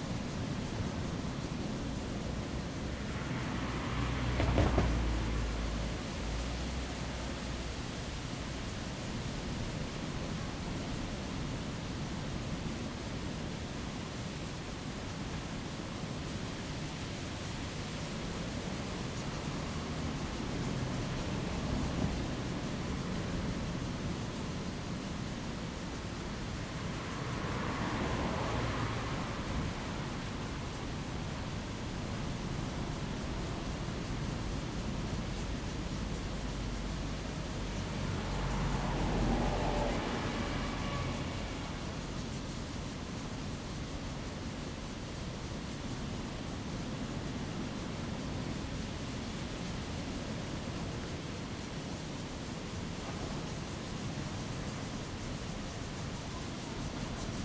Cicadas sound, sound of the waves, In the parking lot
Zoom H2n MS+XY